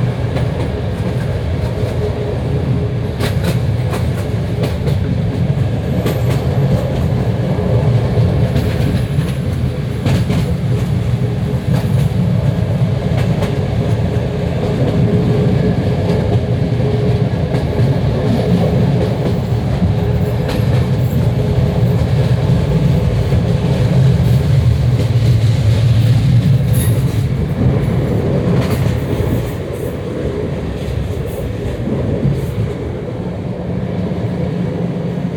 West from Lembork - train passing
(binaural) train stopped in order to let an other train pass. it went by like a flash. the train i was on slowly starts to roll towards the station.
14 August 2014, 23:22